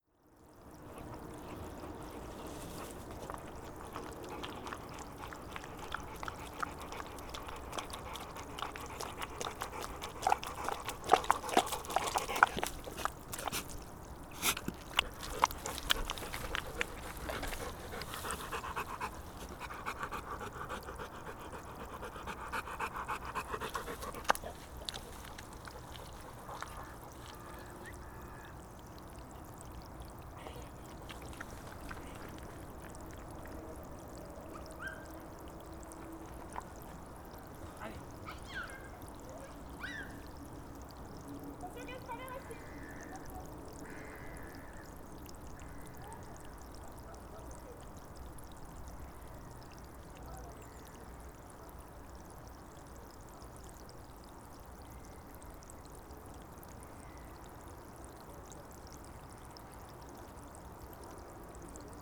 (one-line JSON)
{"title": "Téno, Pluneret, France - Mud sound and surroundings", "date": "2018-07-24 10:23:00", "description": "Mud sound. A curious dog quickly arrives and stay close to recorder and run away. Voices from hikers. Nearby highway traffic noise, continuous. Wind noise towards the end.\nBruit de vase. Un chien curieux cours vers l’enregistreur et reste à proximité pendant un instant puis repars. Voix de marcheurs. Bruit d’autoroute proche, continue. Bruit de vent sur la fin", "latitude": "47.67", "longitude": "-2.93", "altitude": "4", "timezone": "Europe/Paris"}